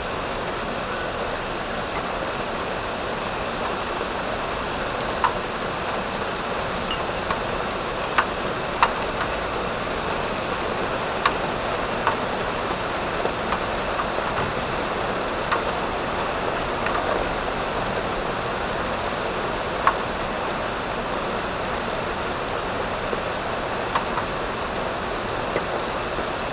from/behind window, Mladinska, Maribor, Slovenia - from/behind window
rain, drops, tea plates